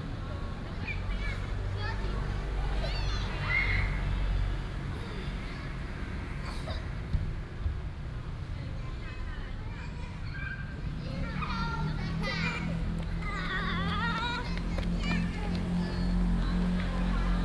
安東公園, Da'an District, Taipei City - in the Park
Mother and child, in the Park